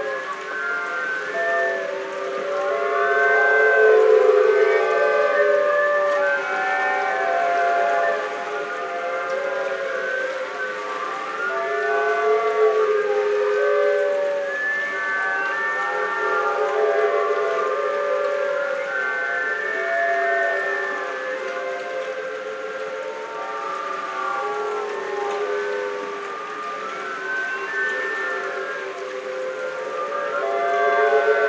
Koh Kwang - Rumors from the sea
Rumors from the sea is a site specific sound installation composed of 130 bamboos, usually used in Thailand as seawalls to slow coastal erosion, with a flute at their top. A bamboo-flutes orchestra played by the waves, performing unique concerts 24 hours a day, depending of the tide, the direction, tempo and force of the waves. As a potential listener, you are invited to define the beginning and the end of the music piece played for you.
Project done in collaboration with the Bambugu’s builders and the students of Ban Klong Muang School. We imagine together a creature that could come from the sea to help humans to fight climate change: the installation is a call to listen to it singing, it screaming, while it tries to stop the waves.
Chang Wat Krabi, Thailand, 23 October 2018